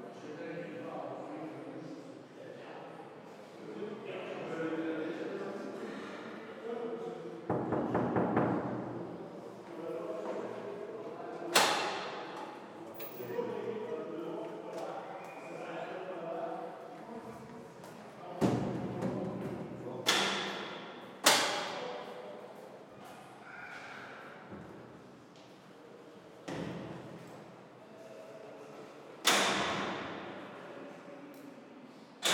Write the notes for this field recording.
Longuenesse - Pas-de-Calais, Centre de détention, ambiance